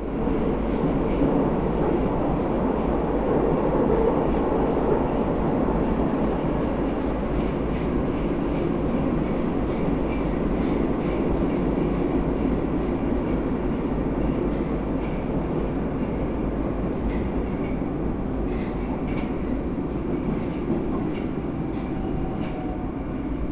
Мичуринский проспект, Москва, Россия - In the subway train